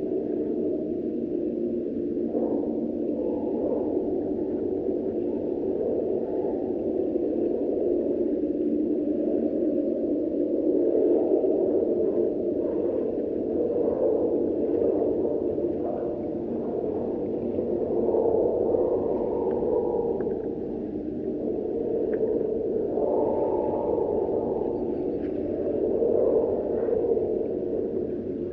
metal wire fence in Nida Lithuania